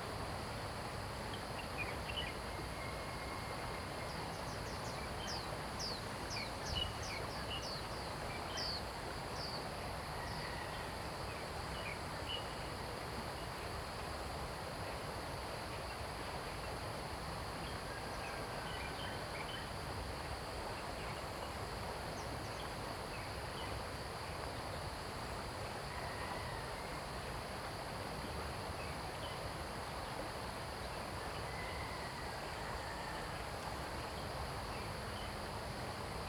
Shuishang Ln., Puli Township, Nantou County - Beside streams
Beside streams, Insect sounds, Birds singing, Chicken sounds
Zoom H2n MS+XY